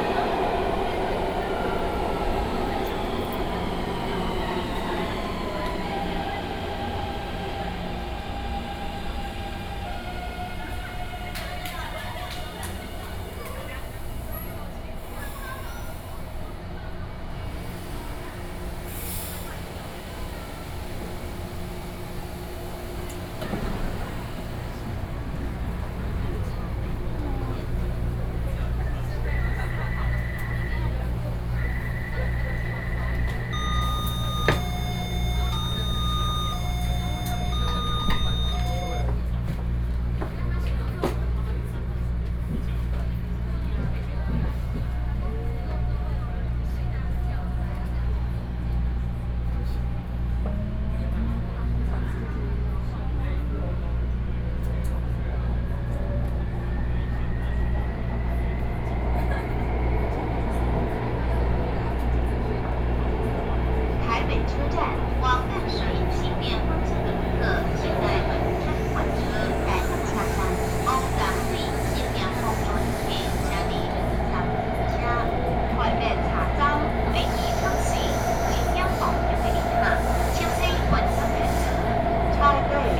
Shandao Temple Station, Taipei - Soundwalk
from Shandao Temple Station, to Taipei Main Station, Sony PCM D50 + Soundman OKM II, Best with Headphone( SoundMap20130616- 6)